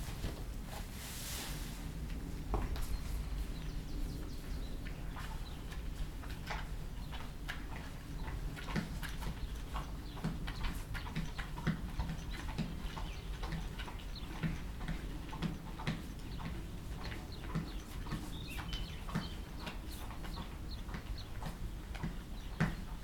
Buchet, Deutschland - Zwei Pferde im Stall / Two horses in the shed
Zwei Pferde kratzen sich gegenseitig mit den Zähnen den Rücken.
Two horses scratching each others back with their teeth.